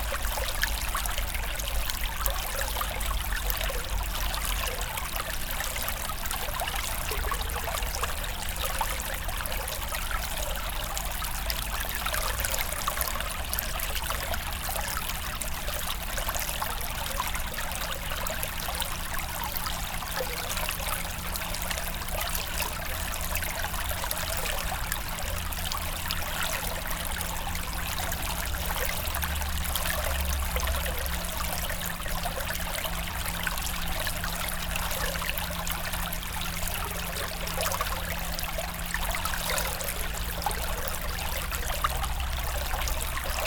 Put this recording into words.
A small river, called Ry Angon.